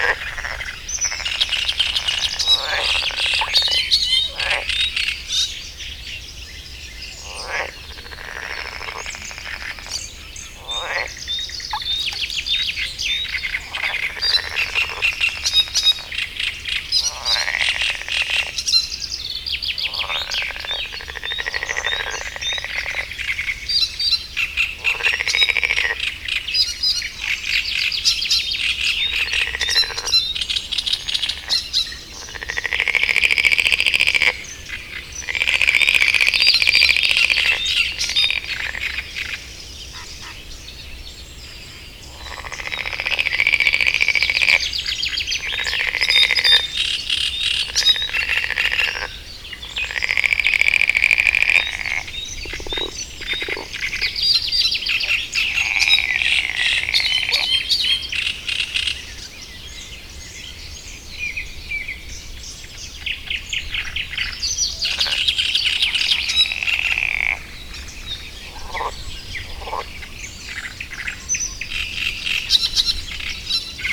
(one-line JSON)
{"title": "Gmina Tykocin, Poland - great reed warbler and marsh frogs soundscape ...", "date": "2014-05-13 04:47:00", "description": "Kiermusy ... great reed warbler singing ... frog chorus ... sort of ... pond in hotel grounds ... open lavalier mics either side of a furry table tennis bat used as a baffle ... warm sunny early morning ...", "latitude": "53.21", "longitude": "22.71", "altitude": "103", "timezone": "Europe/Warsaw"}